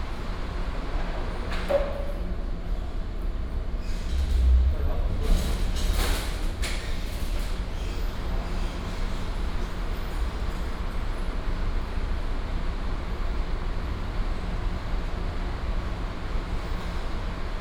IKEA Taoyuan Store, Taoyuan City - Automatic glass door
Export, air conditioning, Escalator, Automatic glass door, Traffic sound